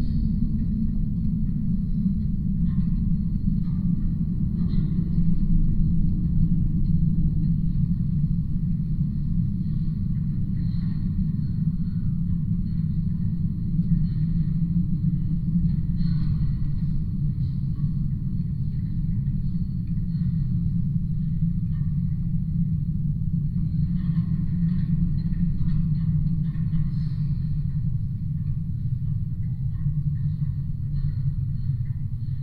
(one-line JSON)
{"title": "Lithuania, metallic fence", "date": "2020-03-30 17:15:00", "description": "contact microphones and geophone on the fence", "latitude": "55.61", "longitude": "25.48", "altitude": "92", "timezone": "Europe/Vilnius"}